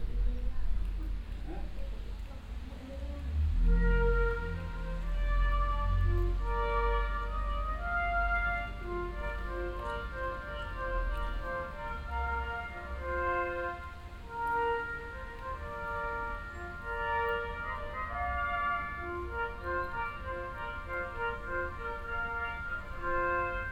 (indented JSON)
{
  "title": "vianden, grand rue, fanfare from the castle",
  "date": "2011-08-09 16:24:00",
  "description": "In the morning time. Walking up the cobblestone street at the medieval festival weekend of the town. The fanfare sound coming from the caste.\nVianden, Hauptstraße, Fanfare vom Schloss\nMorgens. Auf dem Weg hinauf zum Mittelalterfestival der Stadt. Die Fanfare erklingt aus dem Schloss.\nVianden, rue principale, fanfare du château\nLe matin. En chemin vers le festival médiéval de la ville. Le son de la fanfare en provenance du château.\nProject - Klangraum Our - topographic field recordings, sound objects and social ambiences",
  "latitude": "49.93",
  "longitude": "6.20",
  "timezone": "Europe/Luxembourg"
}